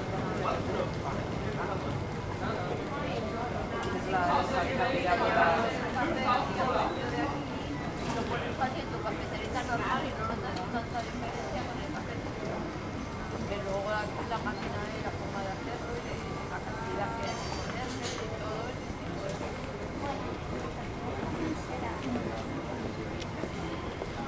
Fiumicino RM, Itaalia - Ambience of airport terminal
Inside airport terminal - passangers walking by, flight announcement, footsteps, music from restaurant
Fiumicino RM, Italy, 6 September 2013